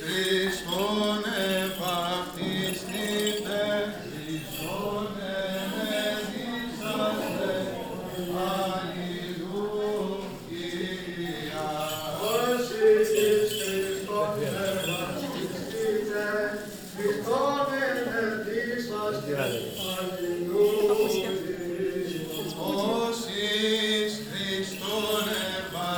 Greek Orthodox baptism - Monastery Osios David, Evia (Euboia)